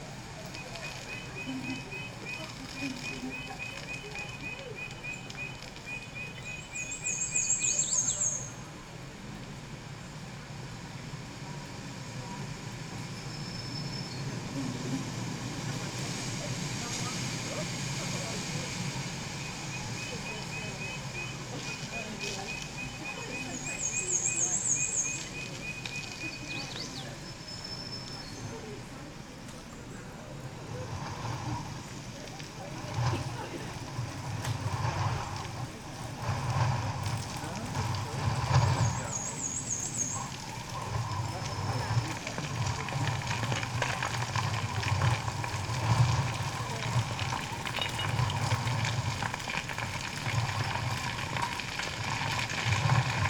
{"title": "Alnwick Gardens, Alnwick, UK - Forgotten Garden Adventure Golf soundtrack ...", "date": "2017-09-25 12:30:00", "description": "Adventure Golf ... Alnwick Gardens ... recording of soundtrack ..? tape loop ..? sound installation ..? as background to this feature ... stood next to one speaker recorded with open lavaliers clipped to baseball cap ... background noise of wind ... rain ... voices ... and a robin ...", "latitude": "55.41", "longitude": "-1.70", "altitude": "59", "timezone": "Europe/London"}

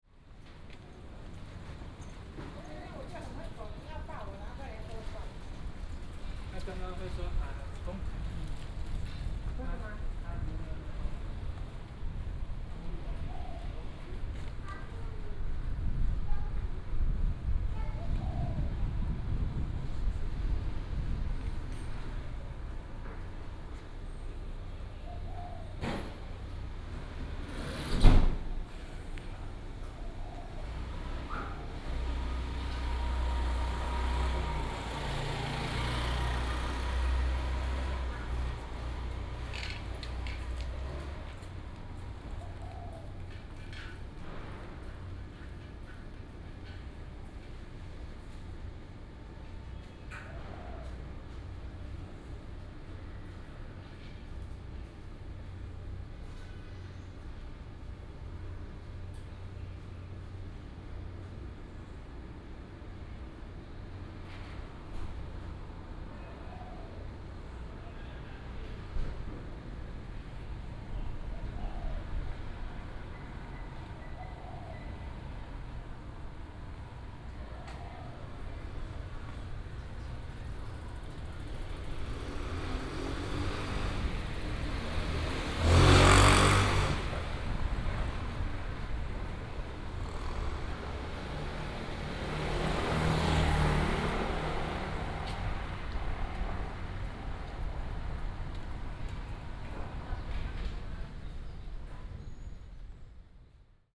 {"title": "Ln., Minsheng W. Rd., Datong Dist., Taipei City - Walking in a small alley", "date": "2017-04-10 16:55:00", "description": "Walking in a small alley, Traffic sound, birds sound", "latitude": "25.06", "longitude": "121.51", "altitude": "13", "timezone": "Asia/Taipei"}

